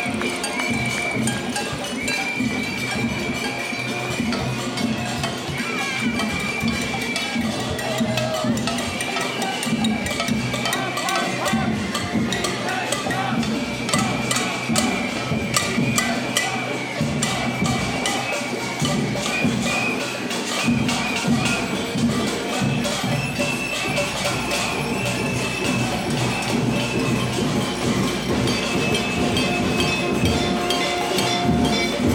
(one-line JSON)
{"title": "Neukölln, Berlin, Deutschland - Berlin. Demonstrationszug – Herrfurthstraße", "date": "2012-05-20 16:00:00", "description": "Standort: Vor Herrfurthstraße 11-12, Blick Richtung Straße (Süd).\nKurzbeschreibung: \"Lärmdemo\" gegen die \"Luxusbebauung\" des Tempelhofer Feldes.\nField Recording für die Publikation von Gerhard Paul, Ralph Schock (Hg.) (2013): Sound des Jahrhunderts. Geräusche, Töne, Stimmen - 1889 bis heute (Buch, DVD). Bonn: Bundeszentrale für politische Bildung. ISBN: 978-3-8389-7096-7", "latitude": "52.48", "longitude": "13.42", "timezone": "Europe/Berlin"}